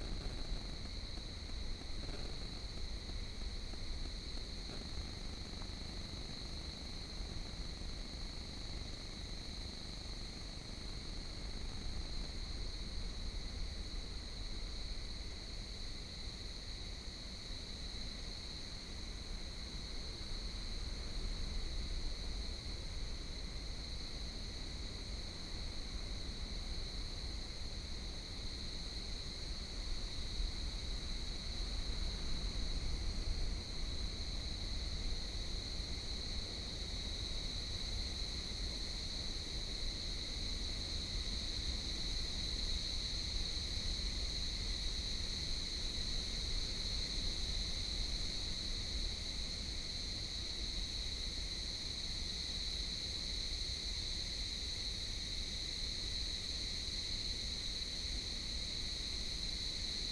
大流溪, 牡丹鄉台26線, Mudan Township - In the woods
In the woods, Cell phone interference signal, Sound of the waves, traffic sound, The sound of cicadas